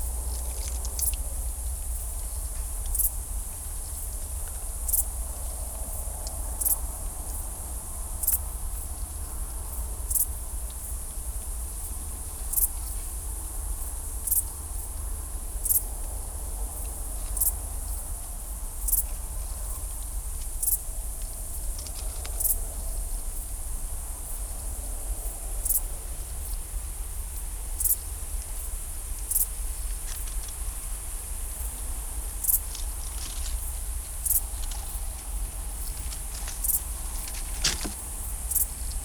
Someone is quite active and undisturbed around the mics, some high pitched squeaks can be heard at minute 1 - I have no ID